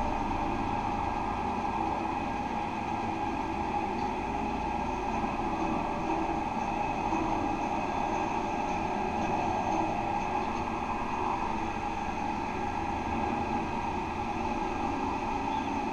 Maribor, Slovenia - one square meter: parking lot guardrail
a more recently built guardrail, separating the actively used parking lot from the abandoned riverside space and stairs. recorded with contact microphones. all recordings on this spot were made within a few square meters' radius.
29 August, ~14:00